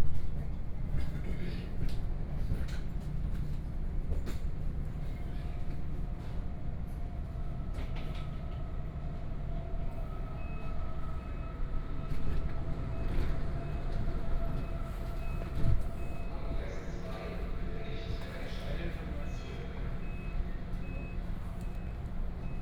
Neuhauser Straße, 慕尼黑德國 - S- Bahn
S- Bahn, Line S8, In the compartment
Munich, Germany, May 2014